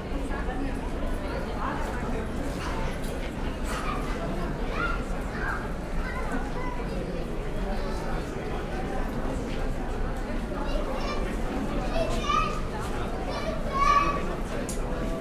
Waldemarbrücke - people passing-by under bridge
Berlin, Kreuzberg, former Berlin Wall area, poeple passing-by under bridge, 25y of German Unity celebrations.
(Sony PCM D50, DPA4060)